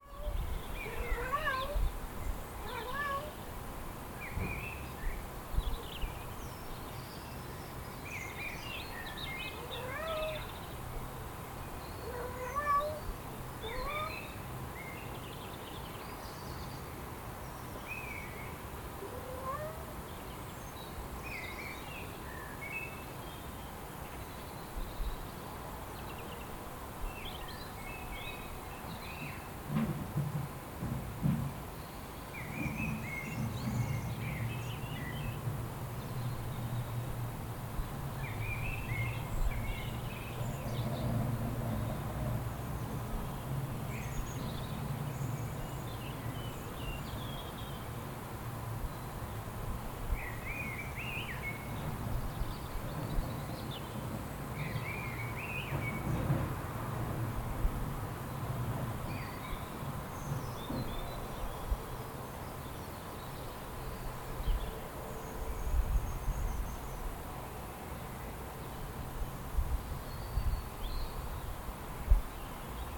Rue Paul Verlaine, Quéven, França - Garden ambience with cat, birds and trash bin
ENG : Ambience of a garden in a very quiet neighborhood. A cat is asking to enter a house, many birds and some trash bin being moved in the street.
Recorder : TASCAM DR07 with internal mics.
FR: Ambiance d'un jardin dans un quartier très calme. Un chat demande entrer à la maison, plusieurs oiseaux et une poubelle est transportée par la rue.
3 March 2021, 19:01